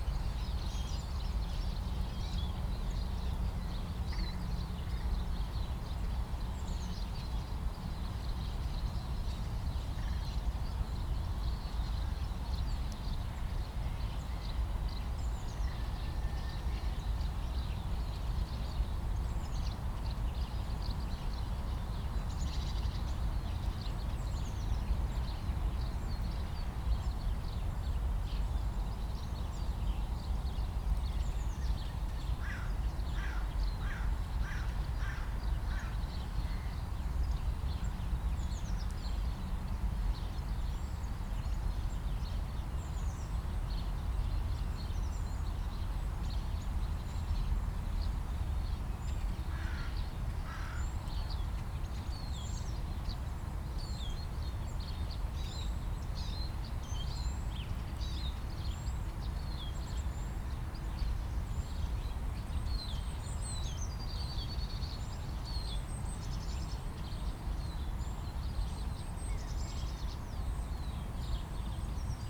Panketal, Berlin - morning ambience
Panketal, river Panke (inaudible), morning ambience, distant traffic hum (6dB filter at 80Hz)
(Sony PCM D50, DPA4060)
Berlin, Germany, 14 February 2019, 08:20